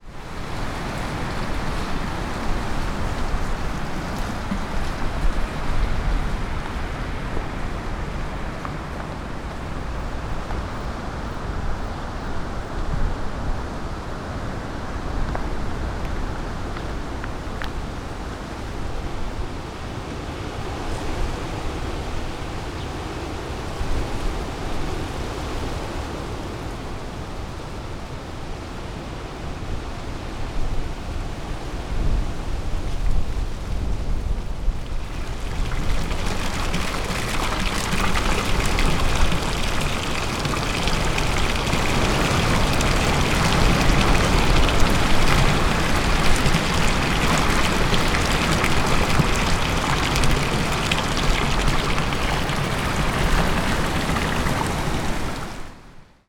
Florac, Manoir du Gralhon with a lot of wind
Florac, France, 14 July